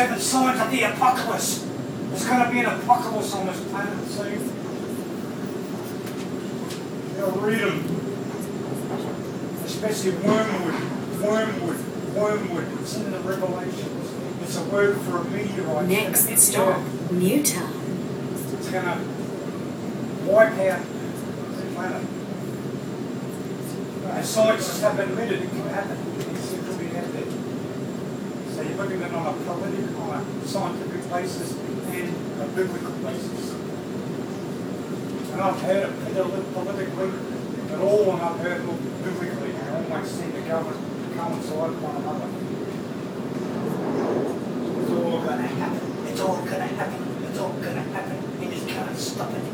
conspiracy ramblings from a fellow commuter on the train